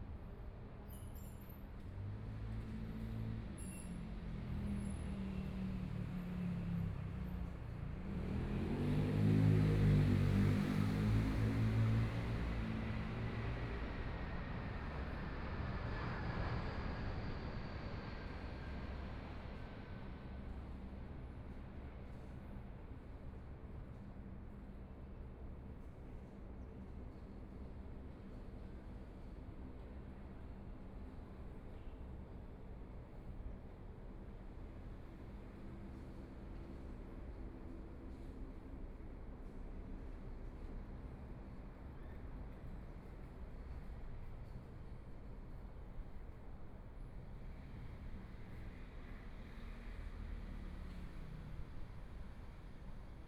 DeHui Park, Taipei City - Sitting in the park
Sitting in the park, The distant sound of airport, Traffic Sound, Aircraft flying through, Binaural recordings, Zoom H4n+ Soundman OKM II
Taipei City, Taiwan